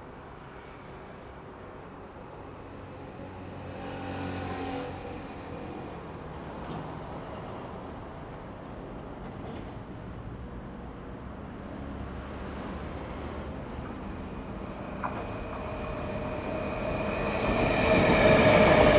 樂山, Shulin Dist., New Taipei City - Next to the railway
Next to the railway, traffic sound, The train runs through
Zoom H2n MS+XY